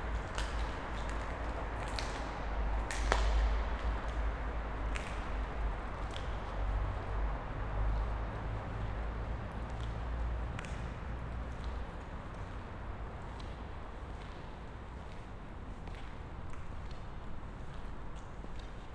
abandoned factory, Neukölln, Berlin - walk in empty factory
walk in abandoned CD factory, broken disks all over on the ground, hum of surrounding traffic in the large hall.
(SD702, Audio Technica BP4025)